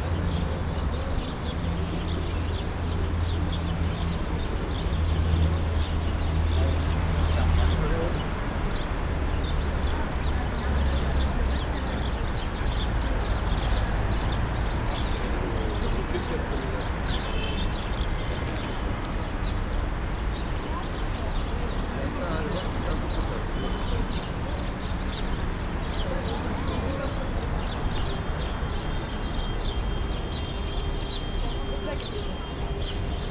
Park entrance with birds, Istanbul
pigeons and birds on a warm winter afternoon at the park entrance